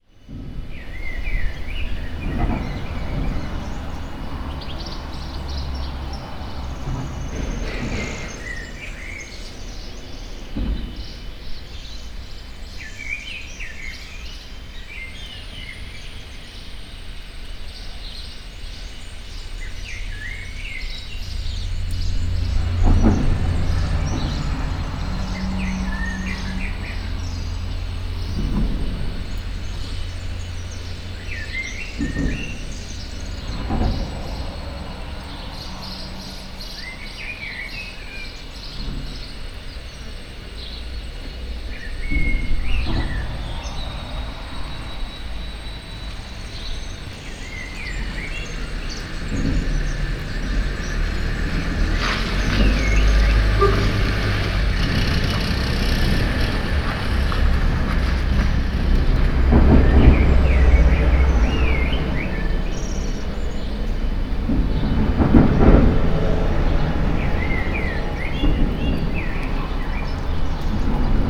An outdoor take of sound from the Eco-Industrial, Post-Capitalist colony of Calafou.
Spain, 17 April 2014